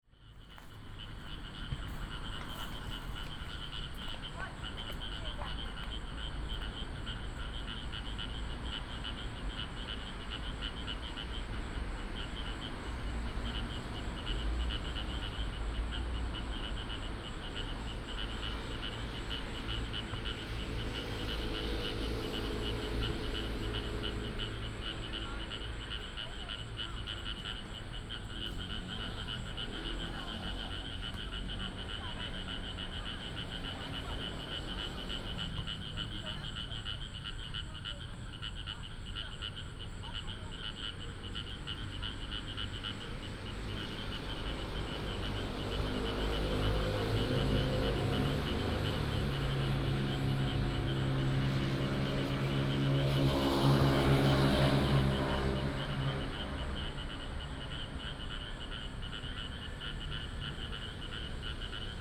建安里社區公園, Pingzhen Dist., Taoyuan City - in the Park
in the Park, traffic sound, The frog sound, Close to rice fields
Pingzhen District, Taoyuan City, Taiwan, 11 August 2017